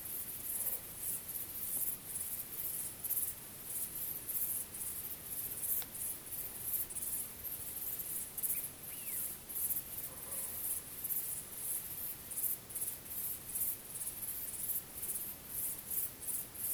{"title": "bushcrickets, Koigi, Saaremaa, Estonia", "description": "bushcrickets at night", "latitude": "58.49", "longitude": "22.95", "altitude": "13", "timezone": "Europe/Tallinn"}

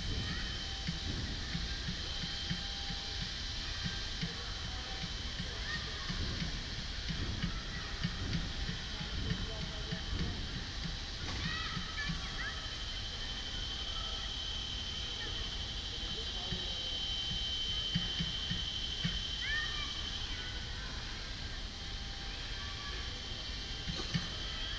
{"title": "Goetlijfstraat, Den Haag, Nederland - Buitenplaats Oostduin", "date": "2017-08-28 16:30:00", "description": "General atmosphere with kids and construction work.\nBinaural recording.", "latitude": "52.09", "longitude": "4.32", "altitude": "8", "timezone": "Europe/Amsterdam"}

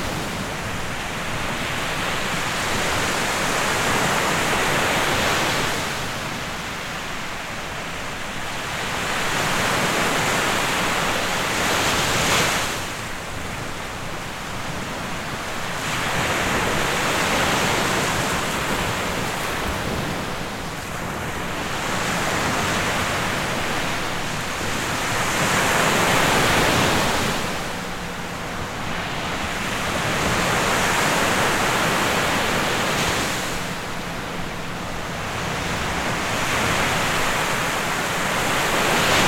{"title": "Gruissan, France - ambience of the beach in winter", "date": "2021-12-25 16:00:00", "description": "ambience of the beach in winter\nCaptation : Zoom H6", "latitude": "43.10", "longitude": "3.12", "timezone": "Europe/Paris"}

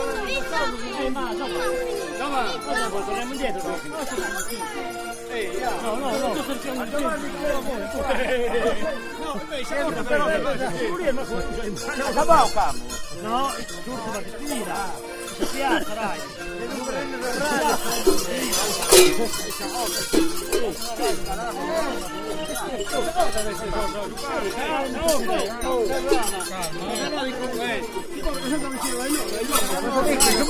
{"title": "sardinia - Mamoiada town - Little accordeon, voices and bells", "latitude": "40.22", "longitude": "9.28", "altitude": "629", "timezone": "GMT+1"}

